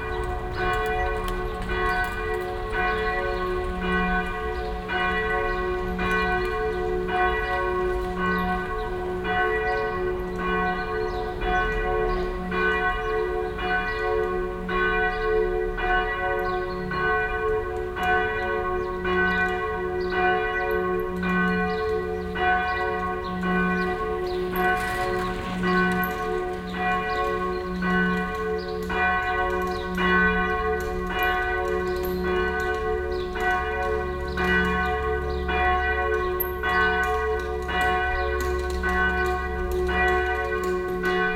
cologne, south, severinskloster, verkehr und tauben - koeln, sued, severinskloster, glocken
mittagsglocken von st. severin, schritte und radfahrer
soundmap nrw - social ambiences - sound in public spaces - in & outdoor nearfield recordings